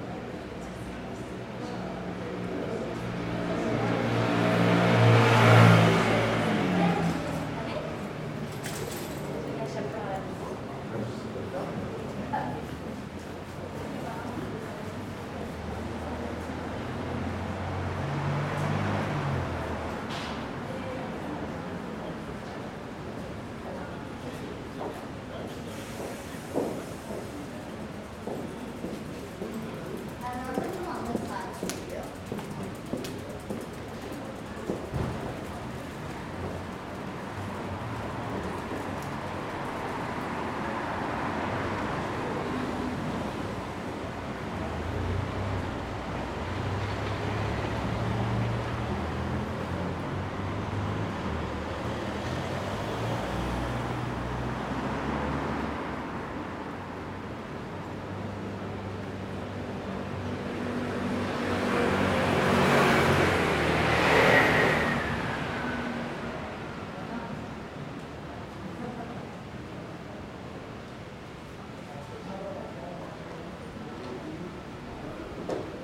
Rue de Béarn, Paris, France - AMB PARIS EVENING RUE DE BÉARN UNDER PORCH MS SCHOEPS MATRICED
This is a recording of the Rue de Béarn during evening under a porch which surrounded the famous 'Place des Vosges' located in the 3th district in Paris. I used Schoeps MS microphones (CMC5 - MK4 - MK8)
Île-de-France, France métropolitaine, France, 22 February 2022, 19:16